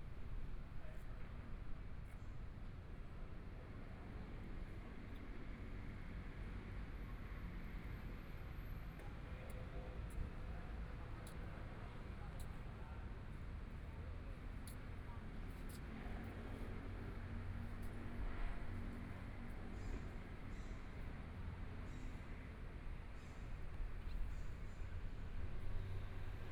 XingAn Park, Taipei - in the Park
in the Park, Traffic Sound, Motorcycle Sound, Pedestrians on the road, Birds singing, Binaural recordings, Zoom H4n+ Soundman OKM II
Taipei City, Taiwan